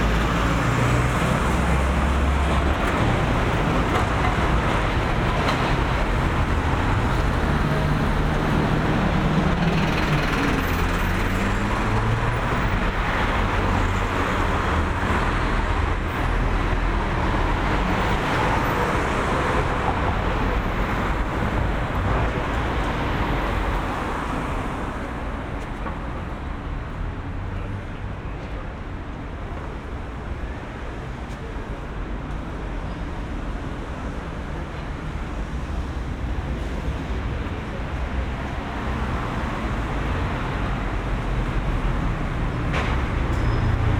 soundwalk between westphalweg and ullsteinstraße
the city, the country & me: september 4, 2013
Berlin, Germany, 4 September 2013, 11:25